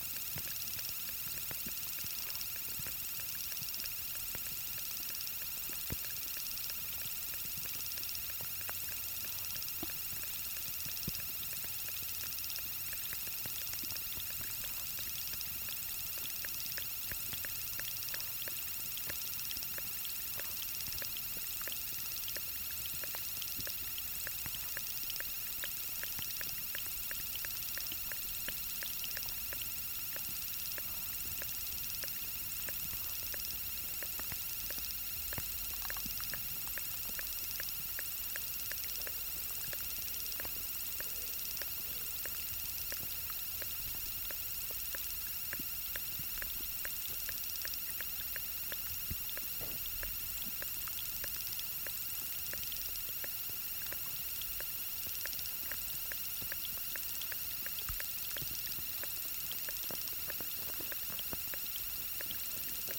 Allotment Soup have created a pond here. This recording was made as part of two days of sound walks with local schools organised by Art Gene. It was a warm sunny spring day with light wind. The recording was made at lunchtime between two school visits. It's a stereo mix of three hydrophones spread across the pond. On the left and right are Aquarian Audio H2a's and in the centre an Ambient ASF-1. Some light eq. SD MixPre-10t.